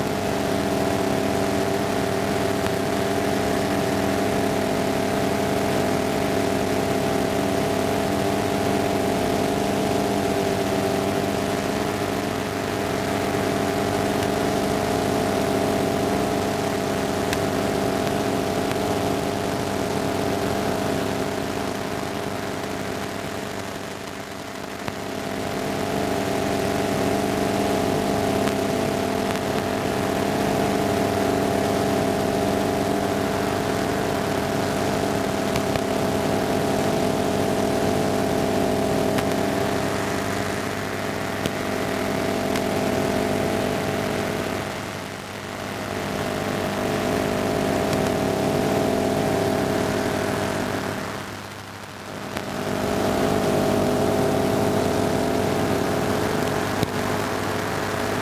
Jūrmala, Latvia, EMF at firefighters; base
slow walk with electromagnetic device Ether around Jurmala's firefighters base
Vidzeme, Latvija, 21 July 2020